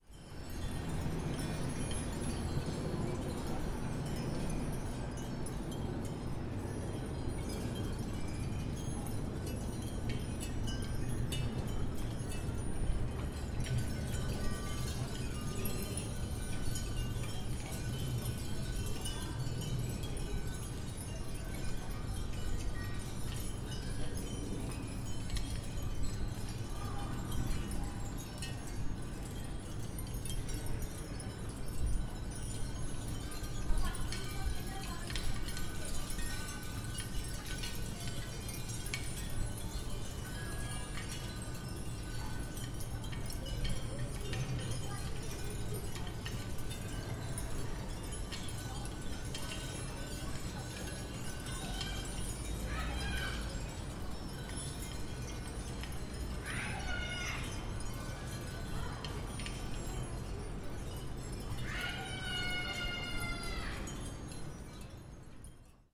Escher Wyss, Zürich, Sound and the City - Sound and the City #16
Klangliche Intervention im Stadtraum: hohe Klingelgeräusche schärfen die Wahrnehmung für das urbane Soundscape. Unter der Viaduktbrücke ist ein vom Wind bespieltes Klangobjekt angebracht, das den urbanen Raum stark umfärbt. Der enge Strassenzug, der auf die Brücke hinführt, transportiert die Verkehrsgeräusche der Hardbrücke als tiefes Rumpeln, die Ventilatoren der nahen Kehrichtverbrennungsanlage grundieren. Die Rufe eines Kindes wirken zwischen den groben Steinwänden des Viadukts wie in einem Innenraum.
Art and the City: Franziska Furter (Mojo, 2012)
Zurich, Switzerland, July 2012